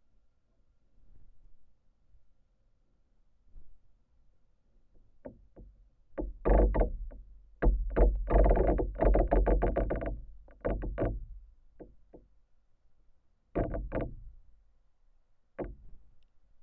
Contact microphones on "moaning" tree
Utenos apskritis, Lietuva, 1 November 2021